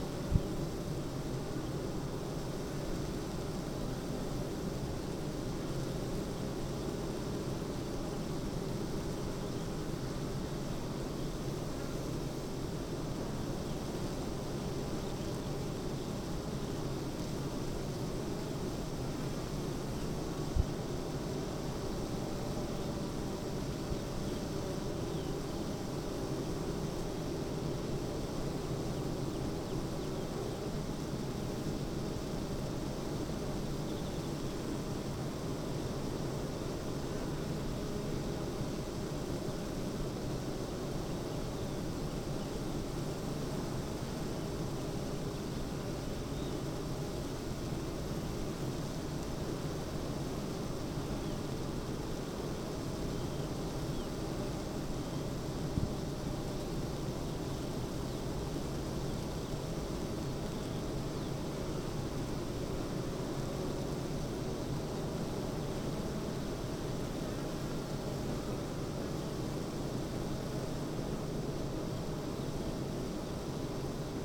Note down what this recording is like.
bee hives ... eight bee hives in pairs ... xlr SASS to Zoom H5 ... pollinating field of beans ..? produce 40lbs of honey per acre ..? bird song ... call ... skylark ... corn bunting ...